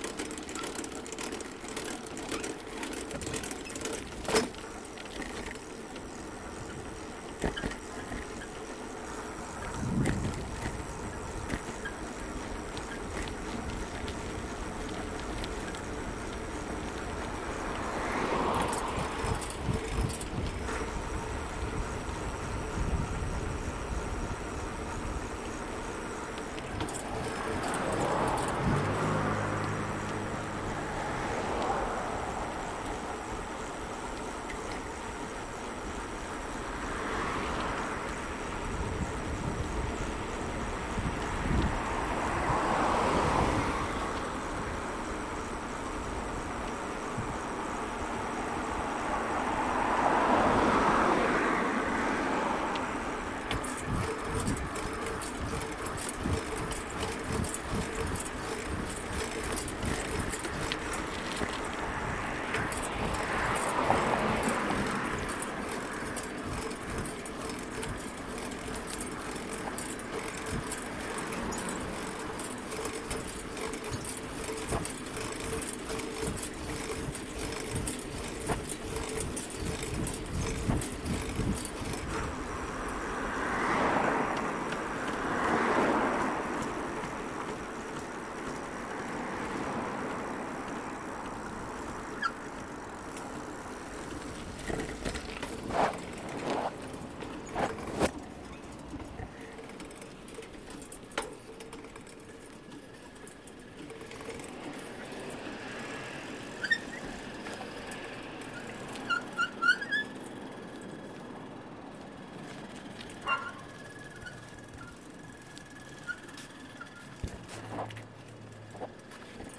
Upper Mount Royal, Calgary, AB, Canada - Riding My Rusty Bike To the Store and Back
For this recording, I mounted an H4N onto my bike and pressed the red button. This recording was part of the Sonic Terrain World Listening Day 2014 Compilation [STR 015].
7 April 2014